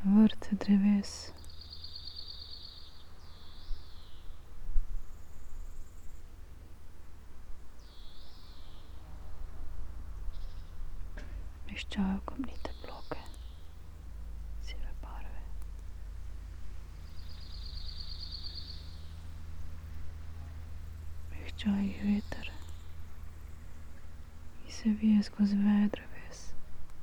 quarry, Marušići, Croatia - void voices - stony chambers of exploitation - poems